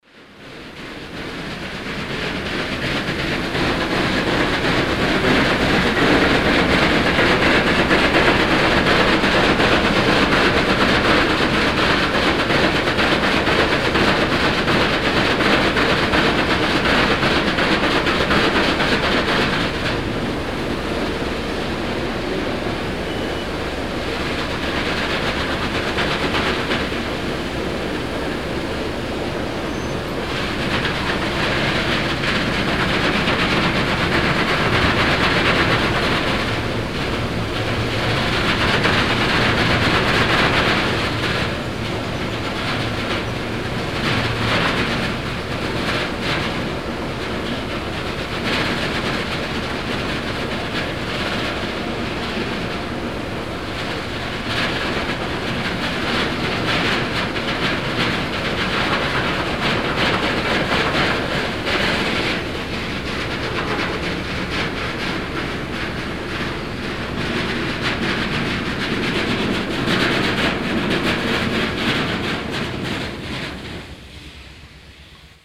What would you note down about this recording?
industry - recording in a factory for steel production- company Schmees - hier abrütteln der formummantelung, soundmap nrw/ sound in public spaces - in & outdoor nearfield recordings